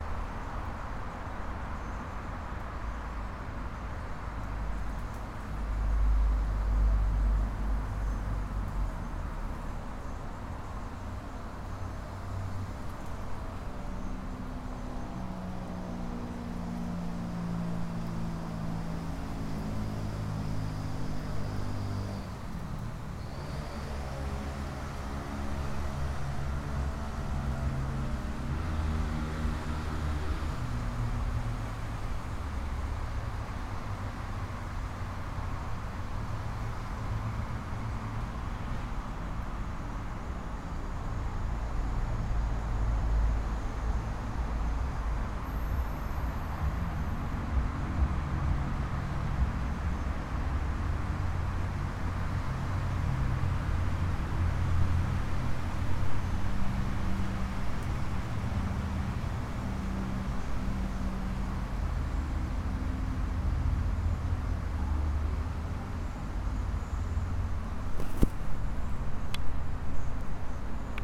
Av. Dom Pedro II - Castelo Branco, João Pessoa - PB, 58013-420, Brasil - Pátio Rádio Tabajara - PK
Rádio Tabajara da Paraíba. Gravado em dia CAVOK; Local sem pessoas utilizando TASCAM DR-05, microfone do gravador Stereo.